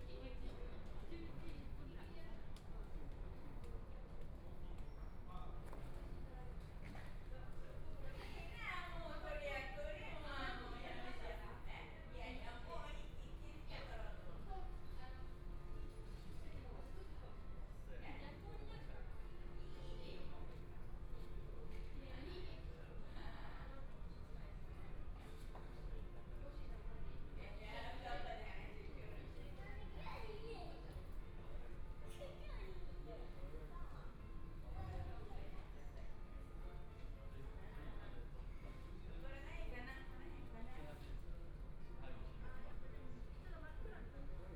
Taipei Songshan Airport - In the airport departure lounge
In the airport departure lounge, Waiting for a flight passengers, Zoom H6 + Soundman OKM II